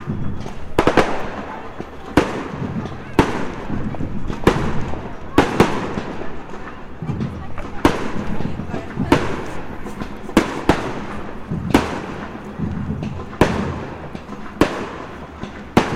Fireworks from Queens Park, Chesterfield - Fireworks from Queens Park
Sounds of fireworks during the Garden of Light Event in Queens Park, Chesterfield (warning - Loud)